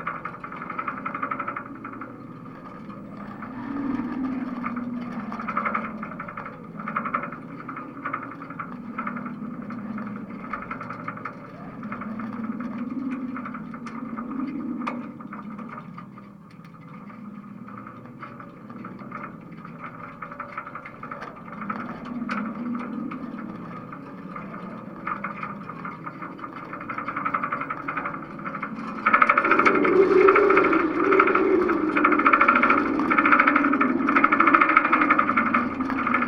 woudsend: marina - the city, the country & me: side stay
stormy day (force 7-8), contact mic on the side stay of a sailing boat
the city, the country & me: june 13, 2013
Woudsend, The Netherlands